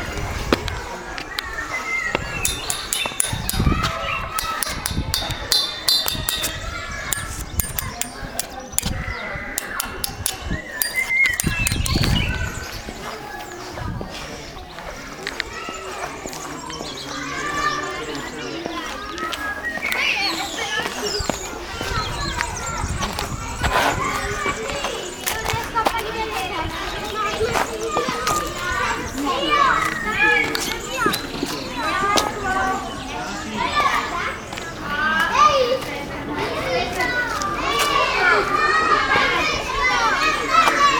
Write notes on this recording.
Walking through the public park, Metal railing and park life. Registred with SONY IC RECORDER ICD-PX440